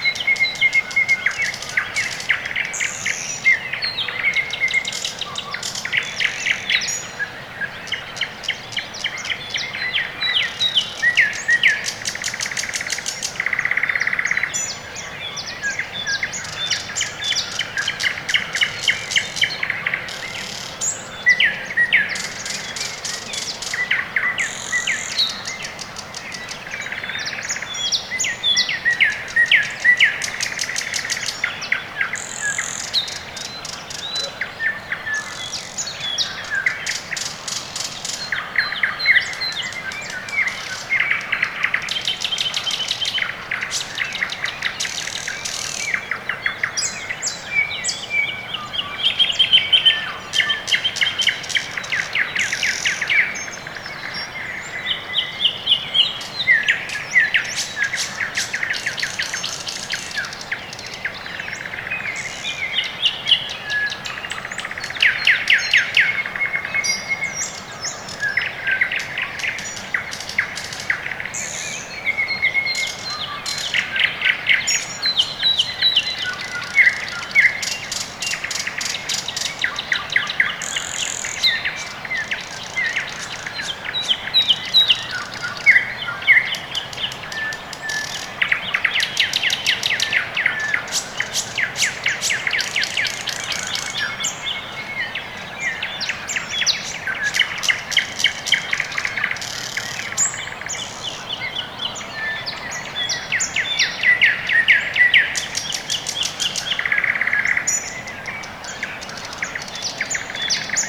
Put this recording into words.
Quiet early morning. Tech: Sony ECM-MS2 -> Marantz PMD-661. Processing: iZotope RXII (Eq, Gain).